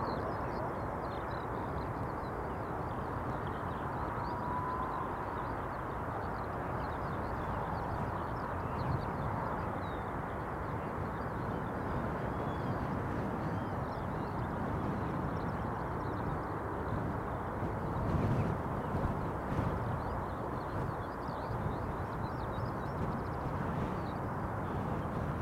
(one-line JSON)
{"title": "Contención Island Day 50 inner south - Walking to the sounds of Contención Island Day 50 Tuesday February 23rd", "date": "2021-02-23 10:50:00", "description": "The Drive Moor Crescent Great North Road Grandstand Road\nWind\nsound is tossed and shredded\nby the gusts\nthe skylarks sings\nabove the gale\nJackdaws stay low\ndogwalkers wrapped against the weather", "latitude": "54.99", "longitude": "-1.62", "altitude": "65", "timezone": "Europe/London"}